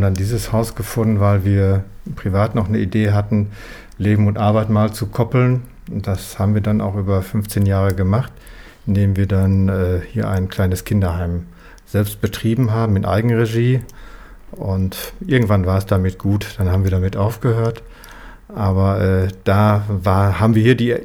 Weetfeld Alte Schule, Hamm, Germany - Conversation in the Old School...
We are gathered around the living room table in the old School of Weetfeld village, a historic building, and home to Rudi Franke-Herold and his family for over 30 years. Together with Stefan Reus, they are founding members of the “Citizen Association Against the Destruction of the Weetfeld Environment”. For almost 15 years, they have been a driving force of local environment activism. Rudi begins by describing the rural landscape around us, an ancient agricultural area. Archeological excavations document settlements from 600 BC. In 1999, Stefan and his wife Petra learnt about local government plans for a 260 ha large Industrial area, the “Inlogparc”, which would cover most of the Weetfeld countryside. With a door-to-door campaign, they informed their neighbours. A living-room neighbourhood meeting was the first step to founding a citizen organization in 2000.
entire conversation archived at: